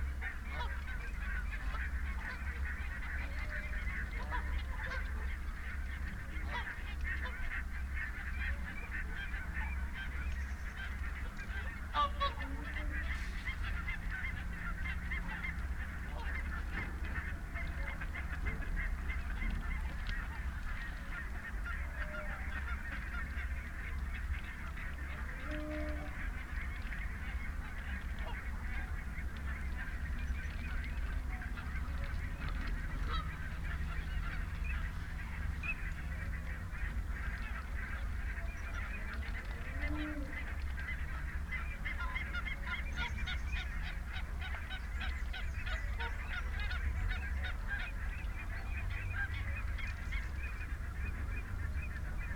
Dumfries, UK - covid soundscape ...
covid soundscape ... dummy head with in ear binaural luhd mics to olympus ls 14 ... folly pond ... bird calls from ... rook ... jackdaw ... crow ... wigeon ... whooper ... mute swans ... barnacle ... canada ... pink-footed geese ... teal ... mallard ... wren ... chaffinch ... pheasant ... unattended extended time edited recording ... background noise ...